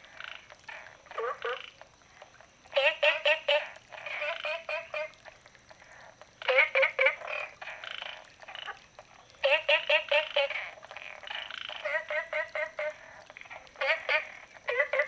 Green House Hostel, Puli Township - Small ecological pool
Frogs chirping, at the Hostel, Small ecological pool
Zoom H2n MS+XY